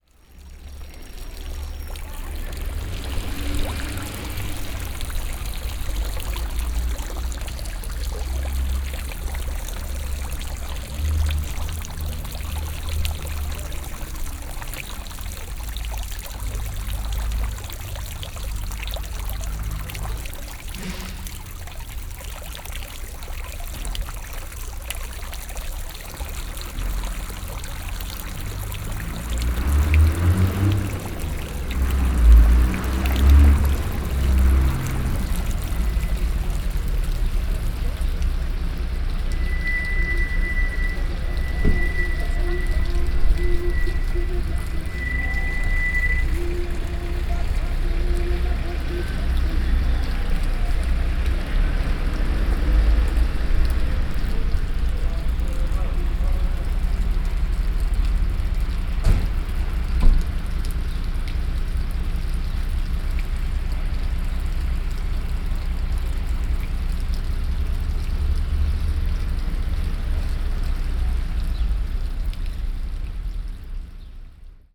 Florac, Place Boyer
The fountain, a truck passing by.